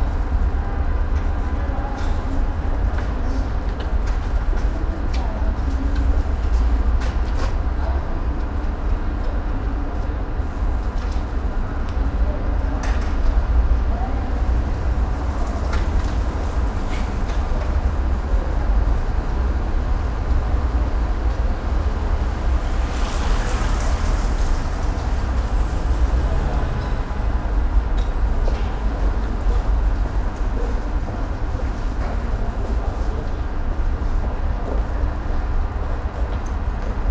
Neon light from the café des artistes, it was a bit too high so microphones arent as close as I wanted them to be, I will go back there with a boom pole.
PCM-M10, internal microphones.
Saint-Gilles, Belgium, 25 January 2012